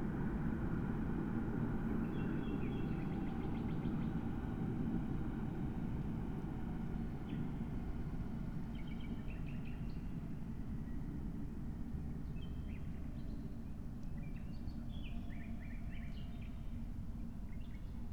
00:25 Berlin, Buch, Mittelbruch / Torfstich 1 - pond, wetland ambience
Deutschland, 16 May 2021